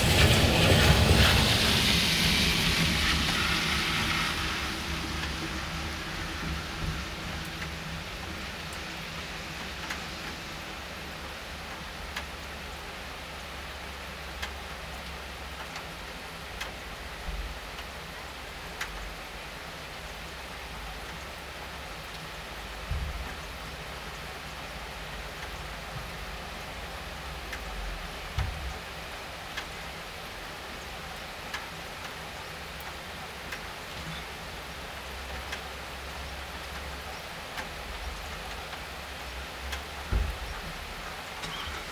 Solesmeser Str., Bad Berka, Germany - Rain in the Neighborhood - Binaural
Binaural recording with Soundman OKM and Zoom F4 Field Recorder. Best experienced with headphones.
Baseline rain drops far and near increasing in tempo from the 6th minute. Occasional vehicular engines. A dog barks in the soundscape.